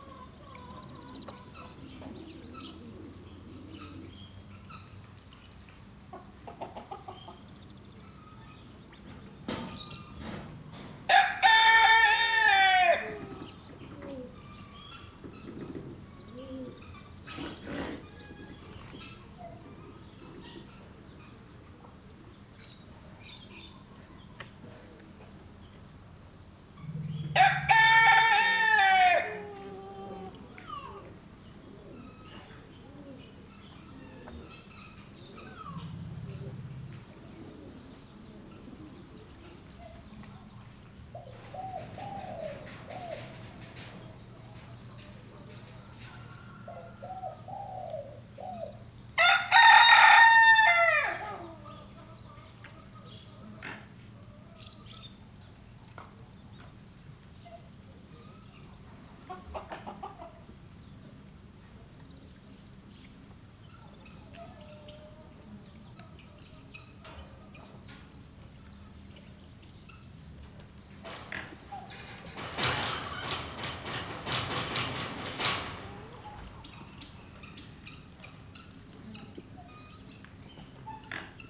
SBG, El Petit Zoo den Pere - Mañana
Ambiente en el Petit Zoo den Pere una mañana de verano.
4 August 2011, 09:30